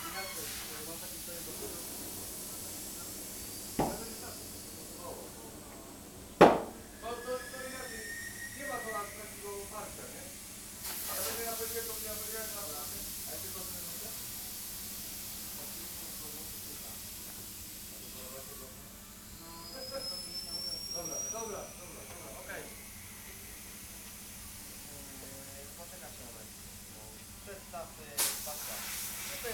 at a tire shop, tires being replaced on several cars, sound of machines, air pumps, hydraulic ramp. mechanics making appointments with customers, chatting.